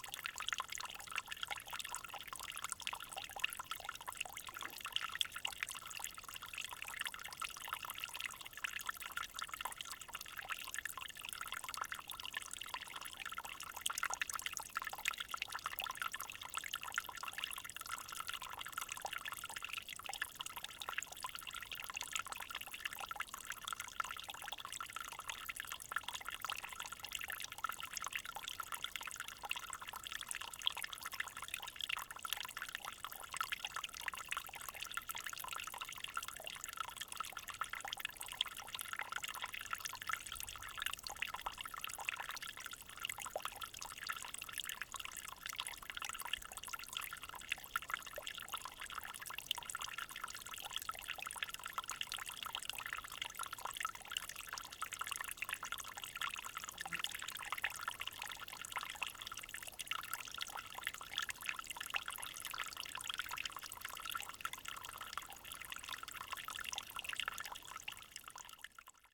Shut-in Cascade, Belgrade, Missouri, USA - Shut-in Cascade
Recording of a small cascade in a shut-in tributary of the Black River
Missouri, United States of America